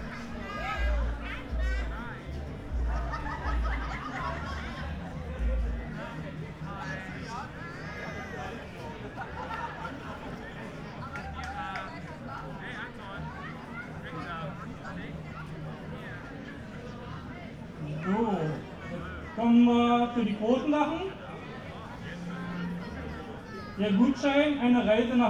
Bestensee, Deutschland - tombola at Gaststätte Seeblick
weekend summer party and tombola, village of Bestensee
(Sony PCM D50, Primo EM172)